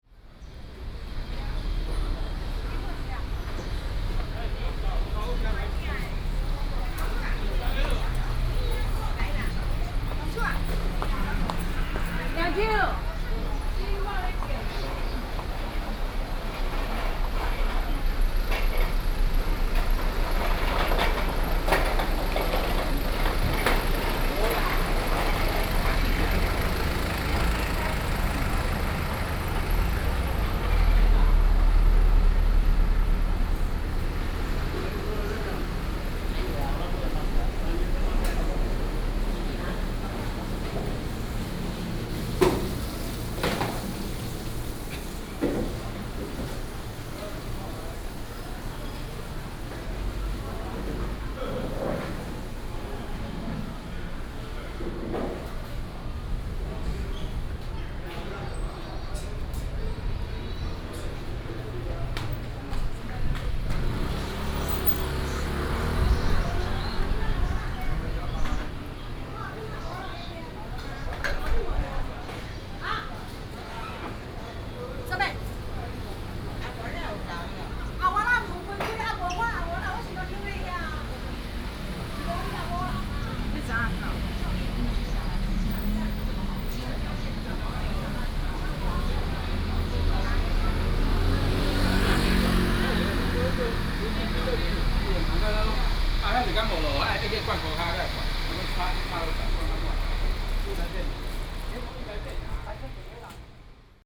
Diaohe Market, Zhongzheng Dist., Keelung City - in the market
Traffic Sound, Vendors, Walking in the market